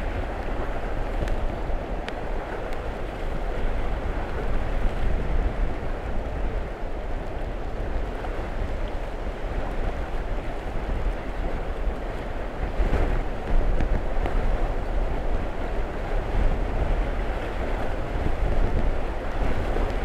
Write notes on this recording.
The wind lashes the surface of Bear Lake, whose ice finally went out at some point in the last 12 hours. A noisy end to a mild winter. Water droplets from the churning are heard hitting the custom-built windscreen cage (wire and plastic mesh, foam panels added). Mic itself has three layers of foam/fabric windscreen. Stereo mic (Audio-Technica, AT-822), recorded via Sony MD (MZ-NF810, pre-amp) and Tascam DR-60DmkII.